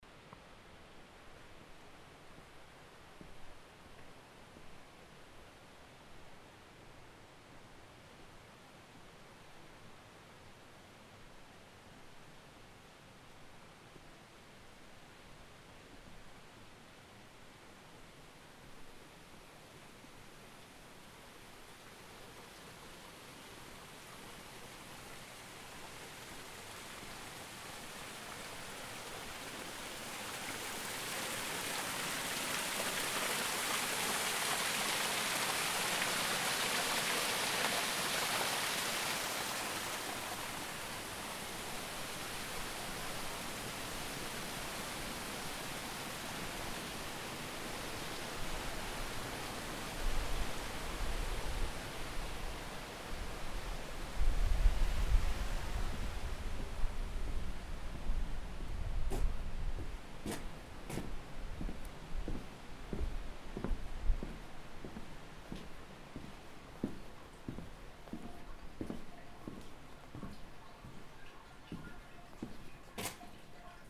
Gassen in Caviano, Tessin CH
Caviano, Tessin, Kastanienwälder, verwunschene Gassen, Brunnengeplätscher, Schritte, Nachsaisonatmosphäre
Caviano, Schweiz, 25 December 2010, 14:10